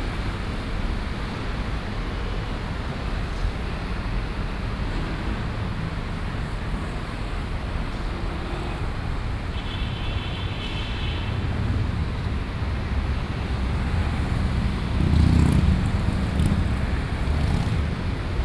昌隆公園, Civic Boulevard - In the entrance to the park
In the entrance to the park, Traffic Sound, Facing the road
Taipei City, Taiwan, 27 June 2015, ~20:00